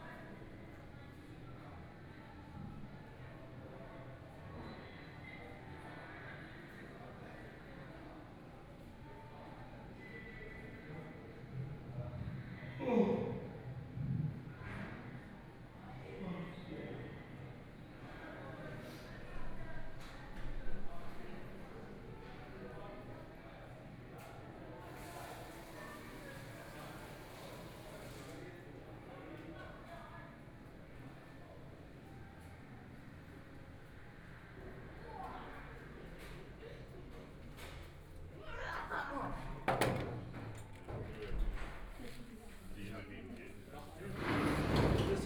schönfeldsraße 19 rgb., 慕尼黑德國 - soundwalk
Walking in and outside the gallery space, Birdsong, Traffic Sound